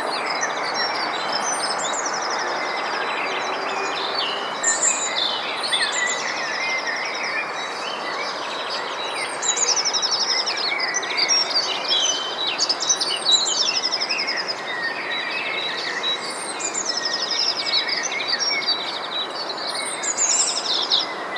tondatei.de: ottostraße köln
vogelsang, straße, straßenbahn